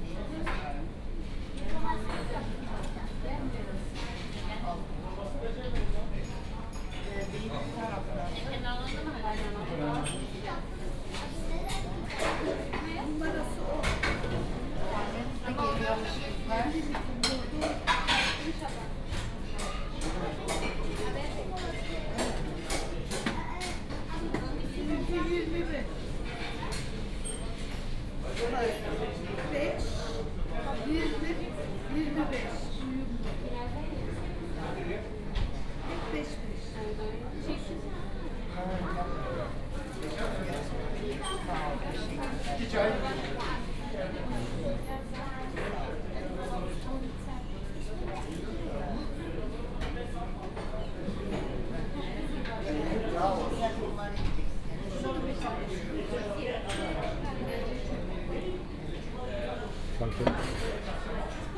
Köln Mülheim, Keupstr. - Kilim restaurant
Köln Mülheim, Keupstr., Kilim restaurant revisited, same dinner as always...
(Sony PCM D50, OKM2)